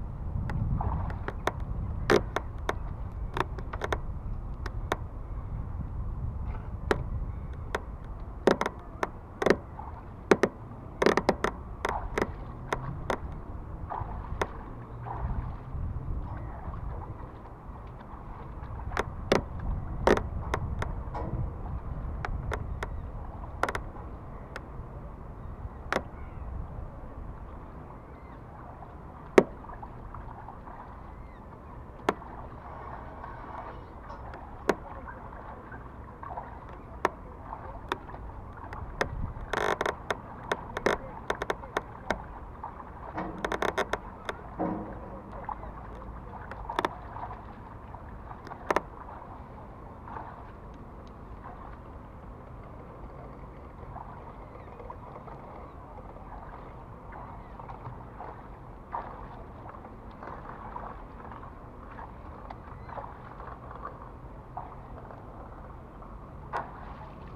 Utena, Lithuania, in pontoon bridge
little microphones placed under pontoon bridge